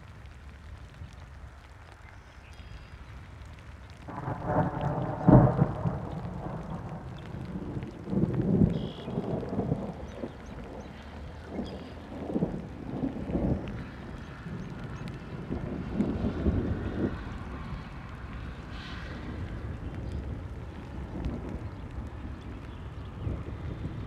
Illinois, USA - Thunderstorm and rain in a field in Illinois, USA
In a field in Illinois during a thunderstorm : thunderclap, thunder and rain, with light trafic in background.
DeKalb, IL, USA, 2 May 2013, 12:00